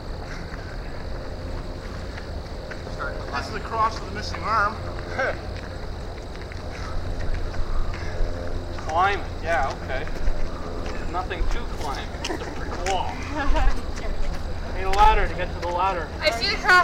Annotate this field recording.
equipment used: Homemade binaural headphones + Sony minidisc recorder, A short walk up to Colline de la Croix, along a path adjacent to a transmitter tower to the summit near the cross